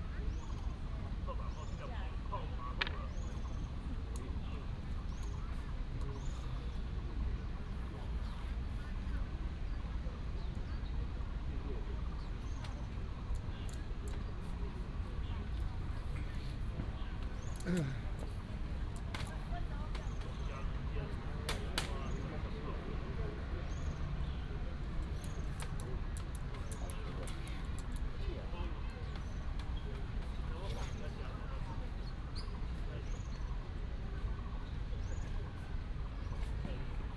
in the Park, A group of old people are playing chess, birds sound, traffic sound